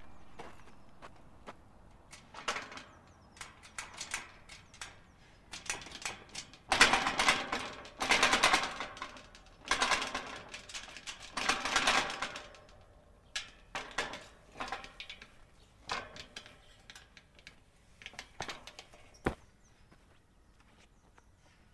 Wedding Berlin Zaun Orthstraße
A fence near the Panke.
Berlin, Germany, April 2011